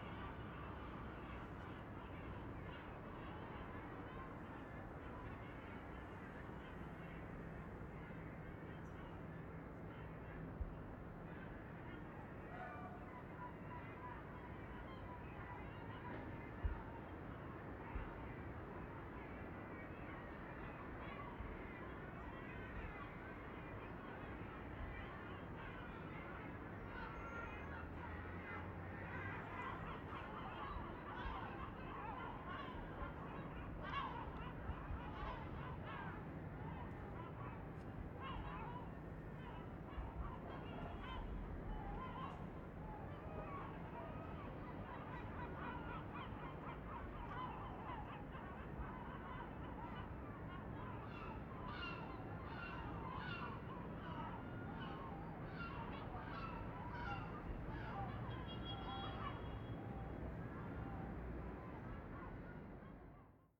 Endoume, Marseille, France - Vallon des Auffes
Seagulls at twilight - ORTF with Okatava mics
By FSS Crew: Clément Lemariey & jérome Noirot- SATIS University of Provence
16 March 2012, 20:00